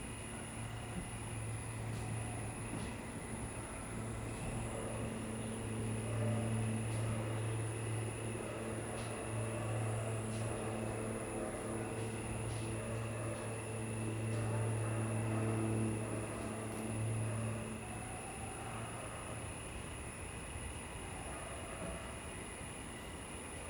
桃米里水上巷3-3號, 埔里鎮 - Thunder sound
Thunder and rain, Play majiang, Sound of insects, Dogs barking
Zoom H2n MS+XY